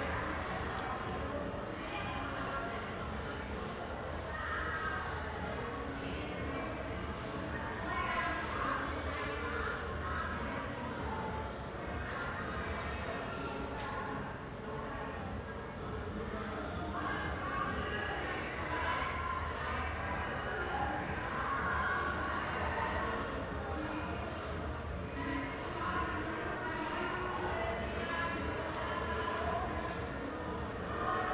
Berlin Bürknerstr., backyard window - night life
a party goes on somehwere in my backyard